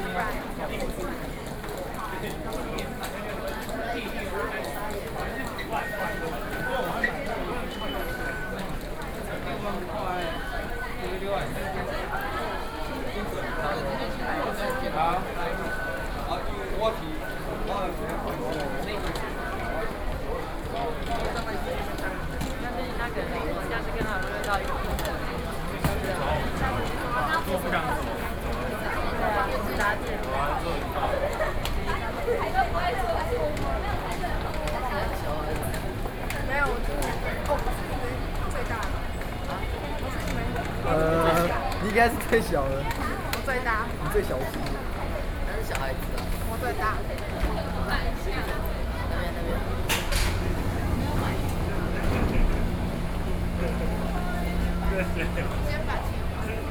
Zhongxiao Fuxing Station, In the process of moving escalator, Sony PCM D50 + Soundman OKM II